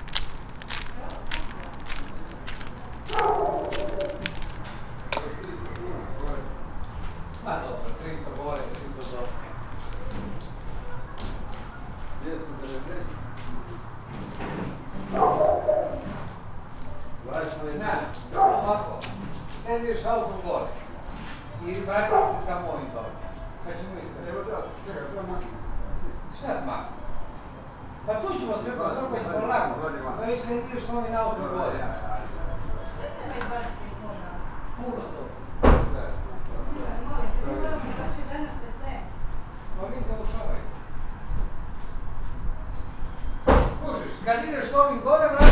Višnjan, Croatia - walk at the top of the village